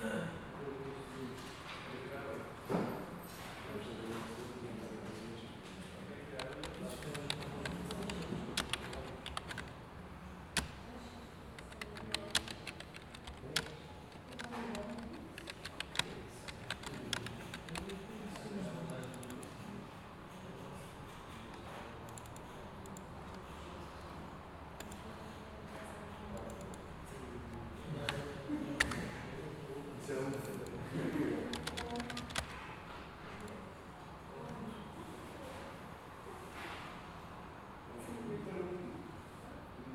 ESAD.CR, Portugal - Biblioteca ESAD - Sala de Informática
Recorded with TASCAM DR40